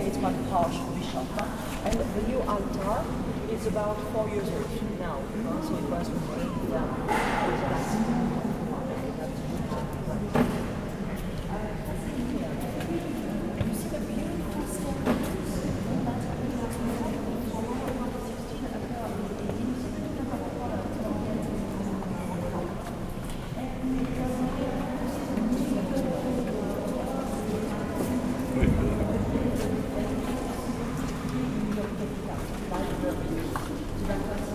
Rouen, France - Rouen cathedral
Indide the Rouen cathedral, with a group of tourists.
July 2016